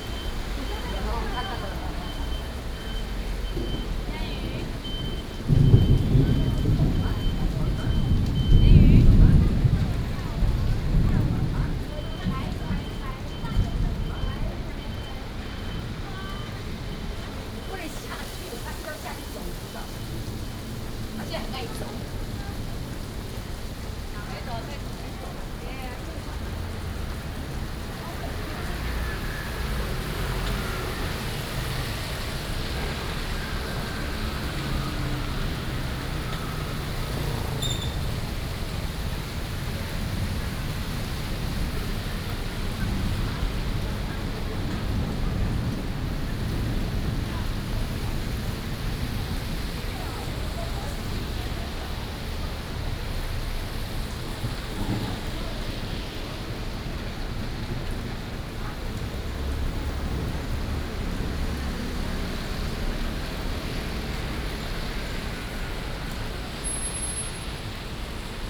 Thunderstorms, Traffic Sound, At the junction